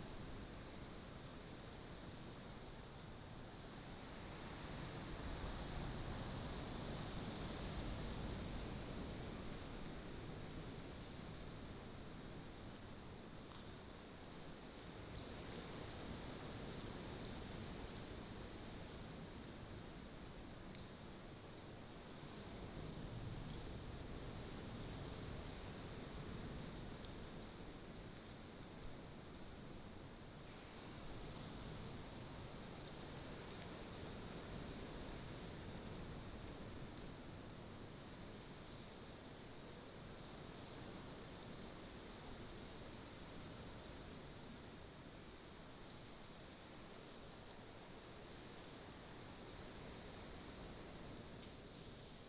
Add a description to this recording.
the waves, Inside the cave, birds